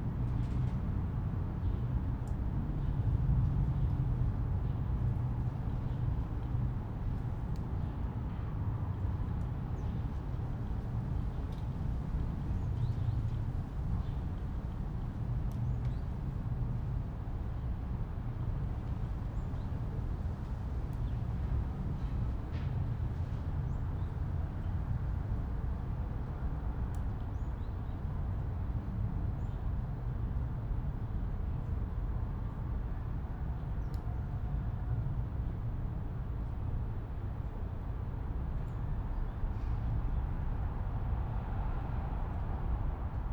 Berlin Bürknerstr., backyard window - wind roar in distant birches
a nice breeze creates an impressive roar in the birches 100m away, mixing with the diffuse sound of distant traffic
(SD702, MKH8020)
Berlin, Germany, 28 January 2016, ~13:00